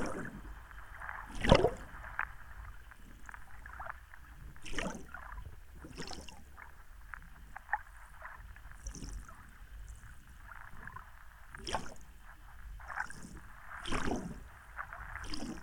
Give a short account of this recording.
stereo hydrophone buried in the beach's sand and mono hydrophone in lagoon's water